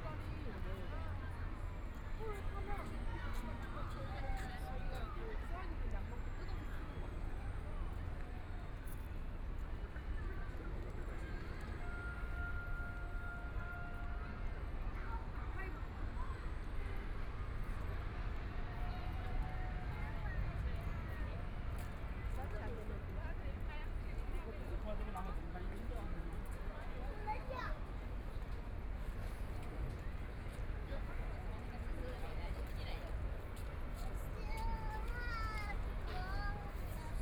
People's Square park, Shanghai - walk in the park
Walking through the Recreation Area, Binaural recording, Zoom H6+ Soundman OKM II
Shanghai, China, 23 November 2013